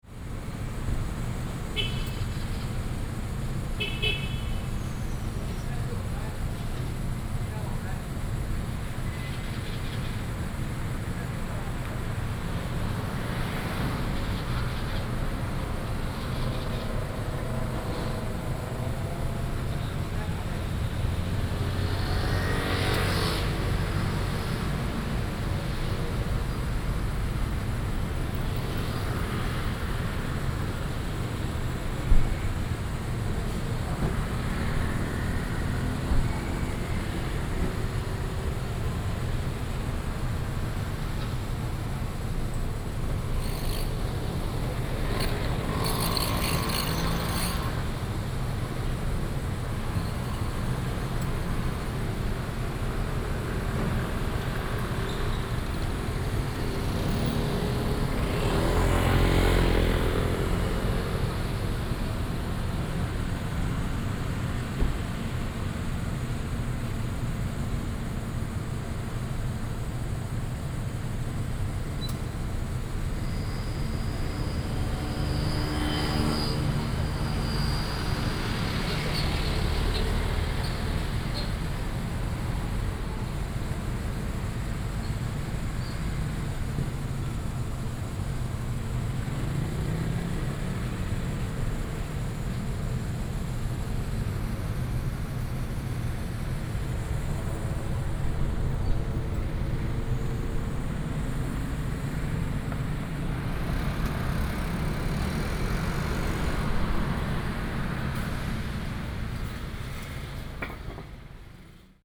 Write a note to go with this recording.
Corner of the road, Traffic Sound